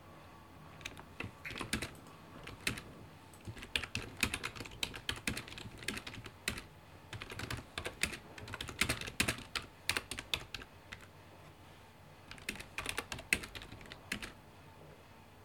Old Sarum, Salisbury, UK - 061 working